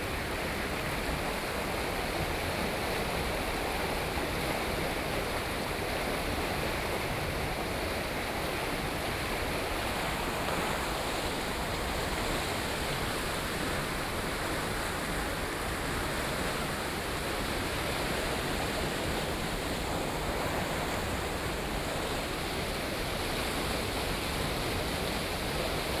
Irrigation channel, Hot weather, The sound of water
Sony PCM D50+ Soundman OKM II
Yilan County, Taiwan, 27 July 2014, 13:33